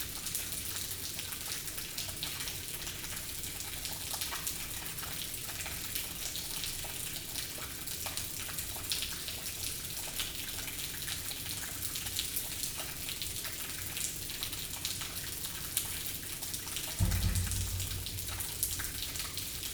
Valenciennes, France - Sewers soundscape
Into the Valenciennes sewers, sounds of the water raining from everywhere. To be here is the best Christmas day ever !